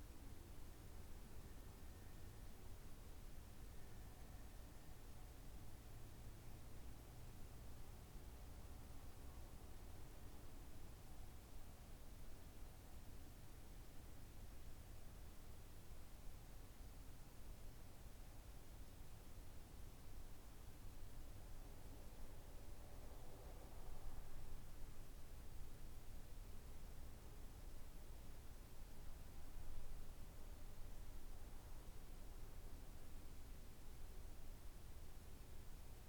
Sollefteå, Sverige - Birds at dawn
On the World Listening Day of 2012 - 18th july 2012. From a soundwalk in Sollefteå, Sweden. Birds at dawn in Sollefteå. WLD
19 July, 03:41